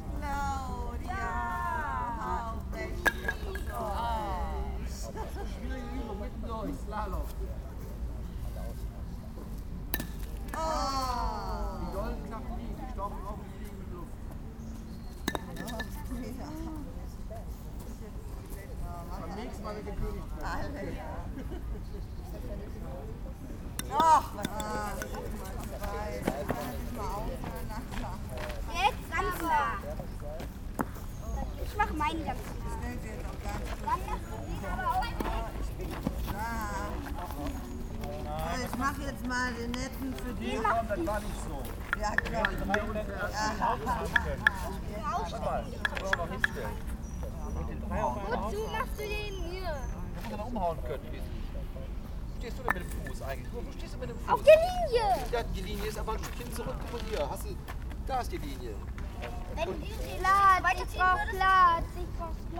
project: klang raum garten/ sound in public spaces - in & outdoor nearfield recordings
May 2008